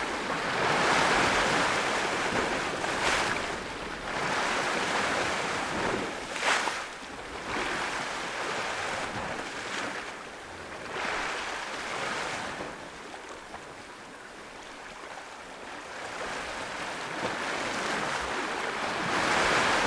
Kıran Mahallesi, Menteşe/Muğla, Turkey - Waves 2
Karya Beach Camp, night time, sounds of waves by the rocks
Unnamed Road, Menteşe/Muğla, Turkey